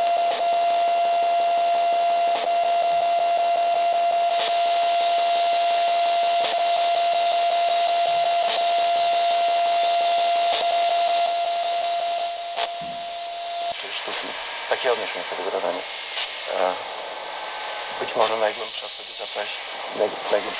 bonifazius, bürknerstr. - sw-radio
13.12.2008 15:00, cheap short wave radio, quick check if it works